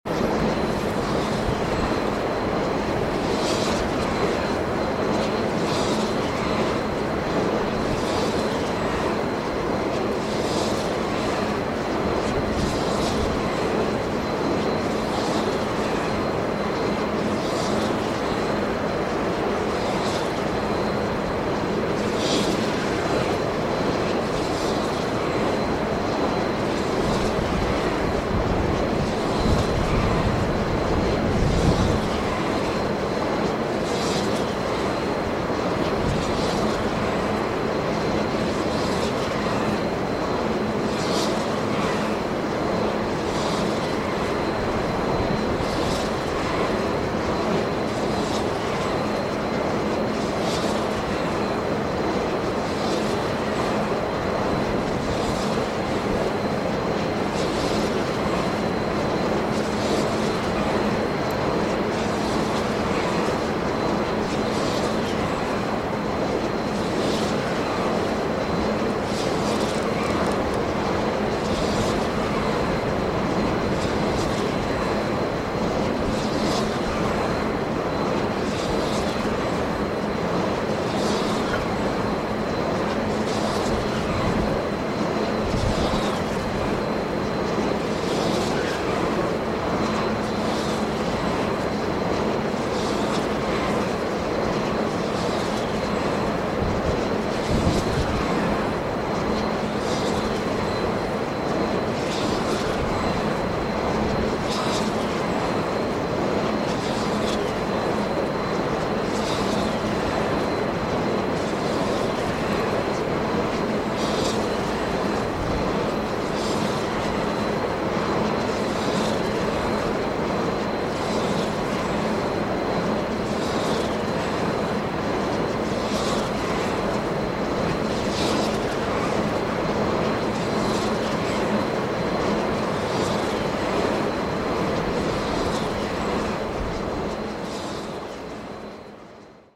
Boulogne-sur-Mer, wind generators - BsM, wind generators
Large wind generators in the harbour of Boulogne-sur-Mer. Zoom H2.
April 2009